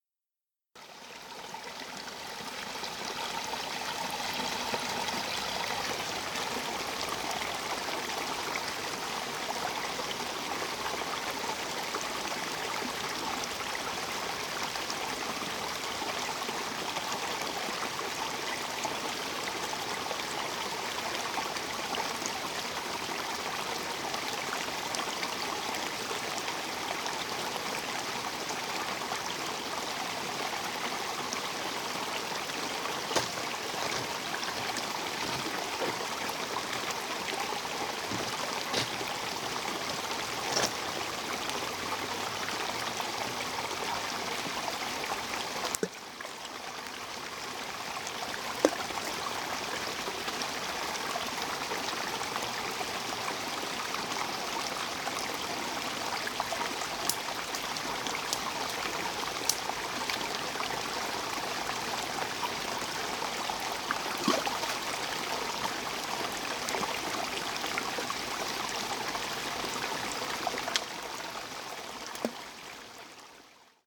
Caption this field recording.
A stream in Shubie Park in late summer.